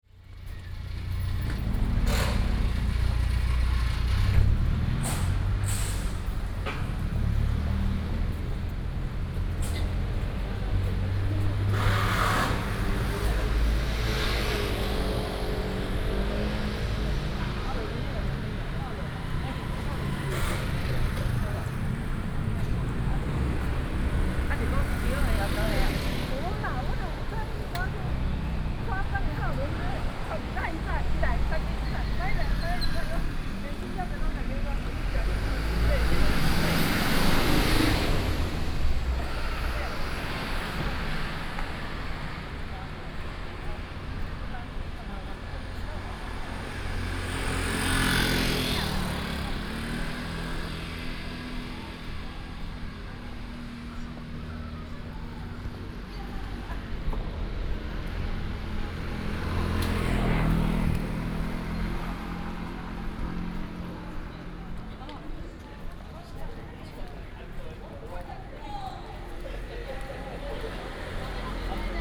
Zhongzheng Rd., Tamsui Dist., New Taipei City - Soundwalk
Walking on the road, To the church, Traffic Sound